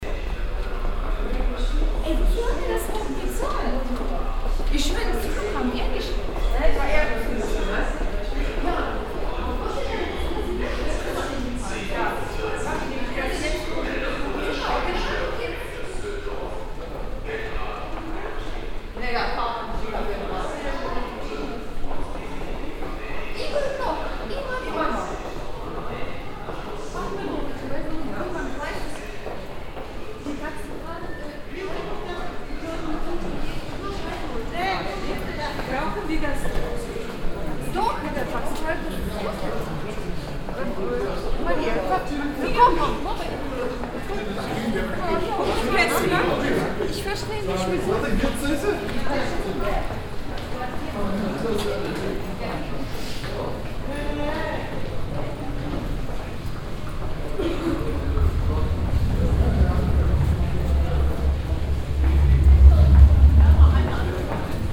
Düsseldorf, HBF, Gleis Nebenzugang - düsseldorf, hbf, gleis nebenzugang
At the main station.
soundmap nrw: social ambiences/ listen to the people - in & outdoor nearfield recordings